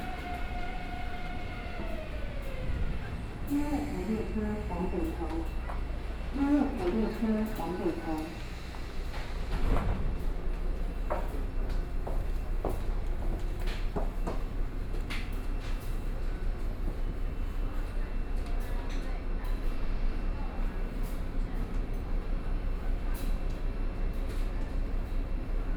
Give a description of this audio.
from Taipower Building Station to Chiang Kai-Shek Memorial Hall Station, Binaural recordings, Sony PCM D50 + Soundman OKM II